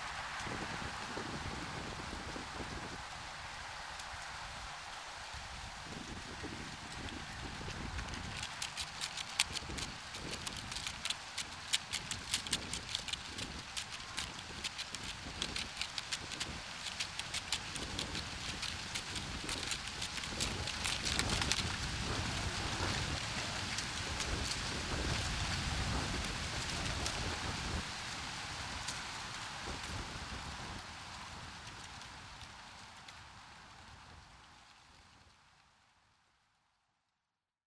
Canton Park, Baltimore, MD, USA - Leaf in the Wind
Recorded with a Zoom H4n.